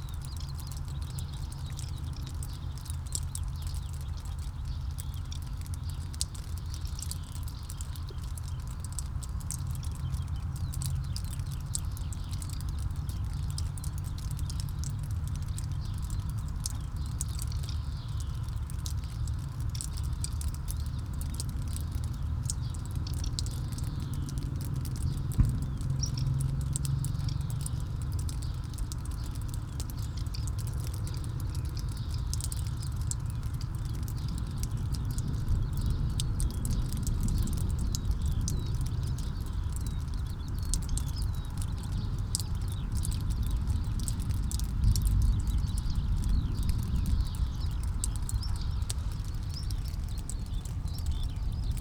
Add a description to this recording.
The recording was made immediately after the ligtning storm using a custom pair of binaural microphones.